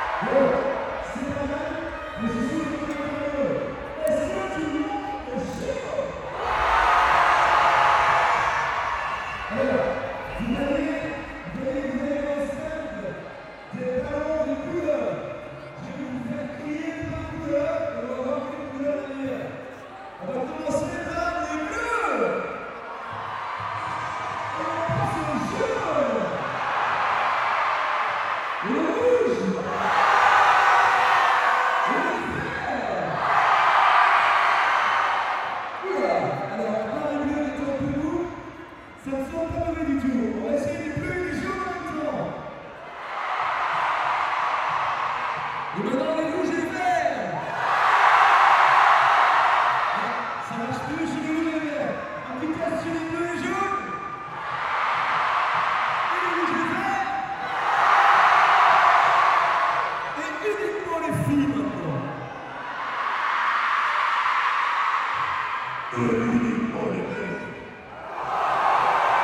Just before the scout k8strax race begins, the race manager asks the scouts to shout as much as they can. And just after that, he said : I will ask the boys to shout as girls, and I will ask the girls to shout as boys ! That's why it's quite strange ! A scout race without shouting doesn't exists !
Mons, Belgium, 21 October 2017